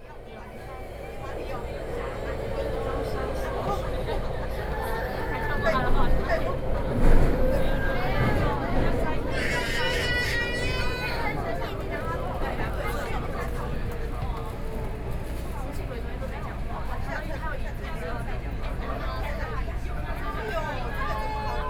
Taipei, Taiwan - Crying child
Crying child, Inside the MRT train, Sony PCM D50 + Soundman OKM II